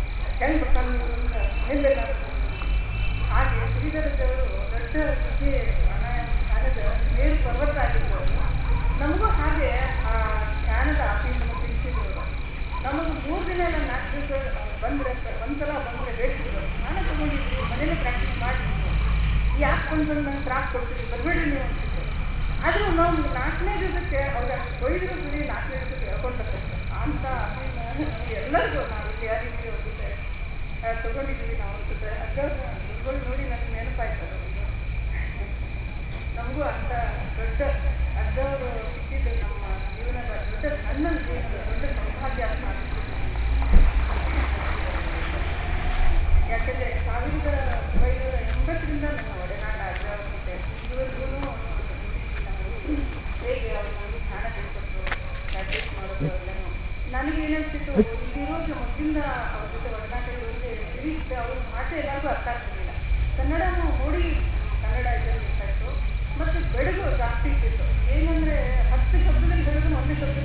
Dharwad, Garag Road, Shridharanand Asram
India, Karnataka, Ashram, insects, night, Namasté, Namaskar
18 February 2011, 19:48